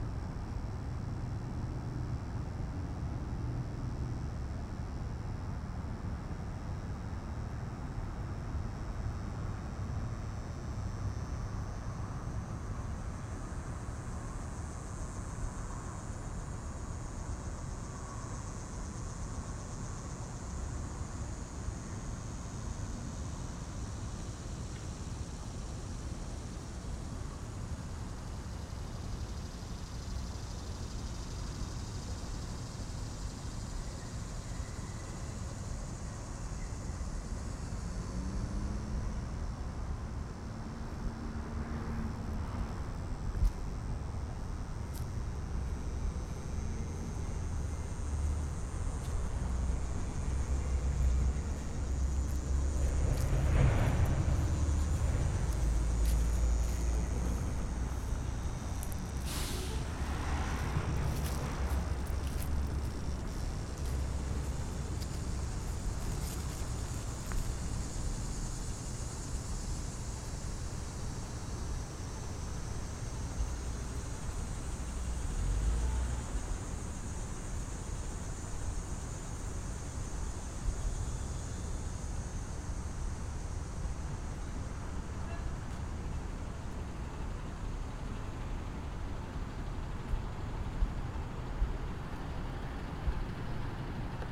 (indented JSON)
{
  "title": "Mt Royal Station, Cathedral St, Baltimore, MD, USA - Cicadas",
  "date": "2019-09-03 14:20:00",
  "description": "Cicadas that could not be seen, but heard. captured with Zoom H4N recorder.",
  "latitude": "39.31",
  "longitude": "-76.62",
  "altitude": "31",
  "timezone": "America/New_York"
}